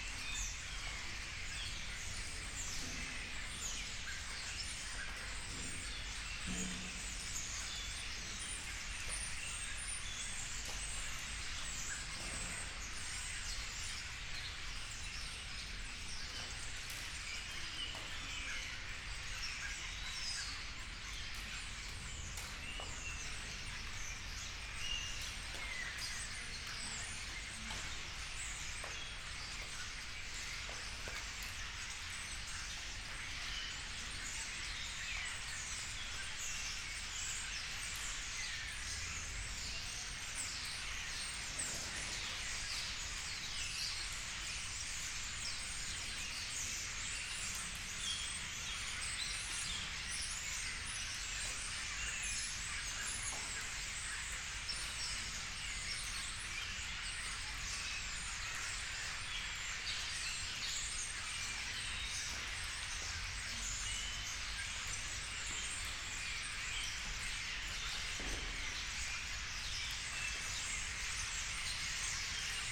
berlin, friedelstraße: backyard window - the city, the country & me: backyard window, swarm of birds
a swarm of birds in the trees of the backyard, raindrops
the city, the country & me: october 24, 2014
99 facets of rain